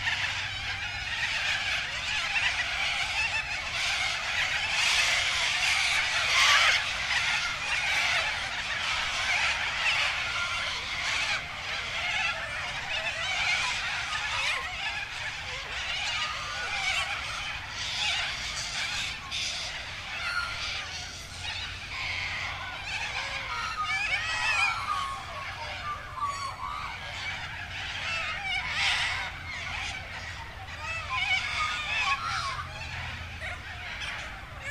One Tree Hill, South Australia - Early Morning Cacophony